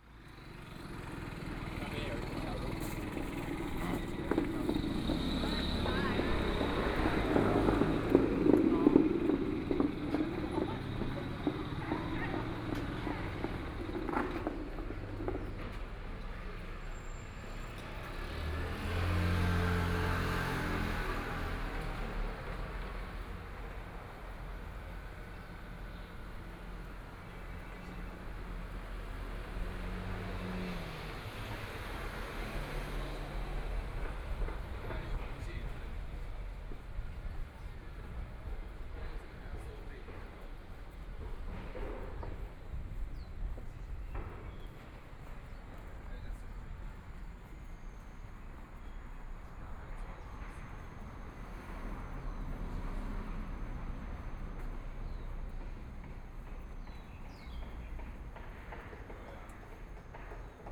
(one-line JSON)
{"title": "Lishan St., Neihu Dist. - Walking on the road", "date": "2014-03-15 15:59:00", "description": "Walking on the road, Traffic Sound, Construction noise\nBinaural recordings", "latitude": "25.08", "longitude": "121.58", "timezone": "Asia/Taipei"}